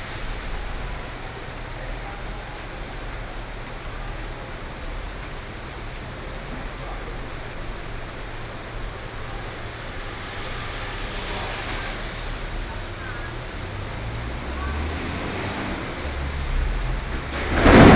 Taipei, Taiwan - Thunderstorm
Traffic Noise, Sound of conversation among workers, Sony PCM D50, Binaural recordings